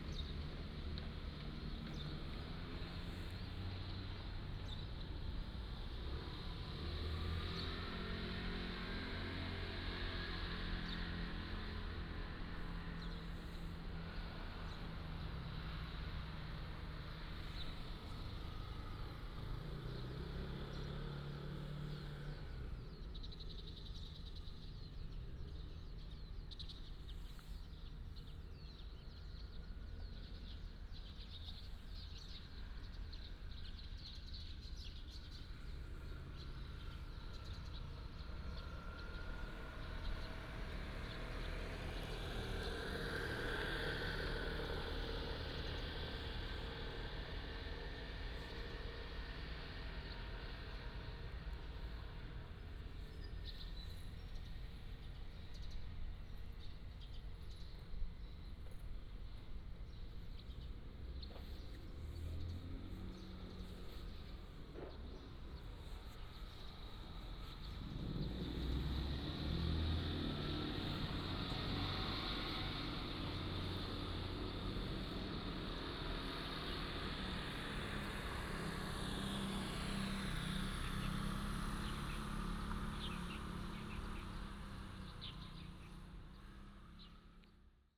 東衛里, Magong City - in front of the temple
In the square, in front of the temple, Traffic Sound, Birds singing
Penghu County, Magong City